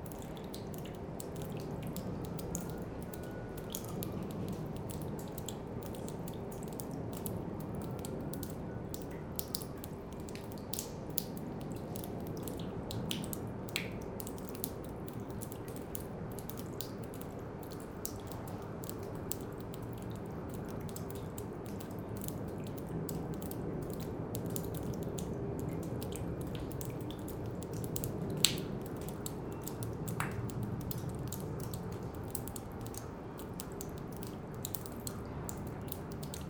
Charleroi, Belgium - Rain in an abandoned factory
Into an abandoned factory, its smelling very bad the ammonia and benzol products. Its raining, landscape is very sad. Far away a siren reverberates.